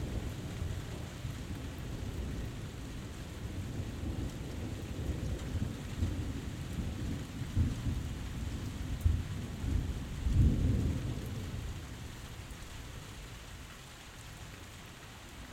Birdwood Rd, Cambridge, UK - City Thunderstorm
Thunderstorm recorded from garden under shelter. Birds singing and searching for food, passing traffic and general street noise can be heard.
Zoom F1 and Zoom XYH-6 Capsule